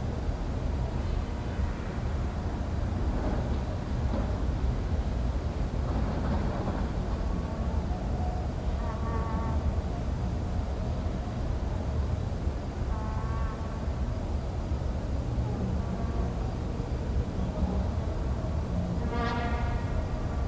Listening to the city from the 16th floor of Anstey’s building, Saturday night…
from the playlist: Seven City Soundscapes: